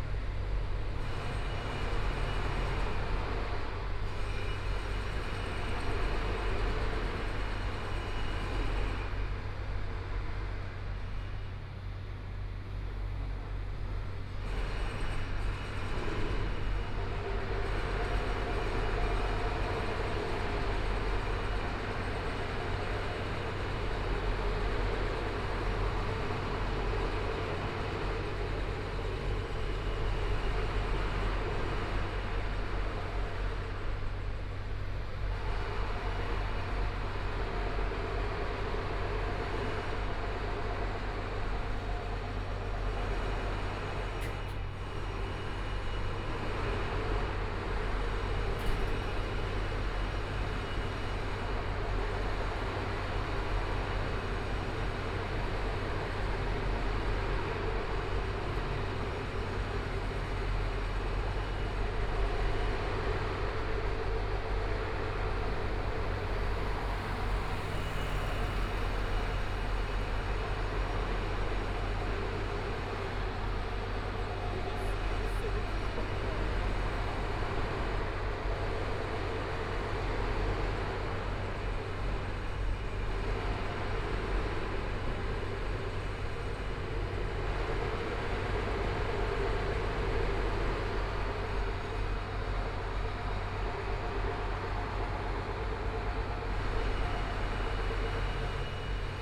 台北市中山區晴光里 - Construction site sounds
Construction site sounds, Traffic Sound, Motorcycle Sound, Pedestrian, Clammy cloudy, Binaural recordings, Zoom H4n+ Soundman OKM II
Taipei City, Taiwan